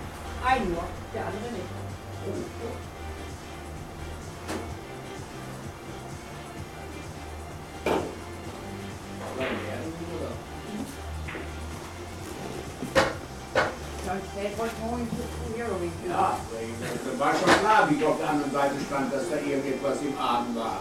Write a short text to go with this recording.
Alt Carnap, Karnaper Str. 112, 45329 Essen